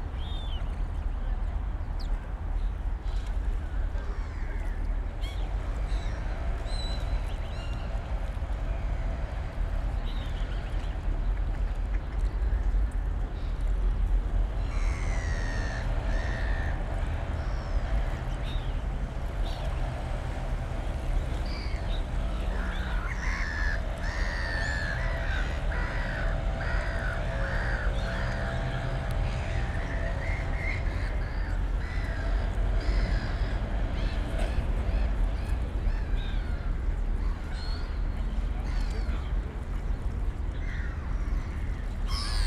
Michaelkirchplatz / Engelbecken, Berlin, Deutschland - Cafe Engelbecken
It is Saturday. We hear people and animals, mostly birds, embedded in the city, a busy urban environment in Corona times.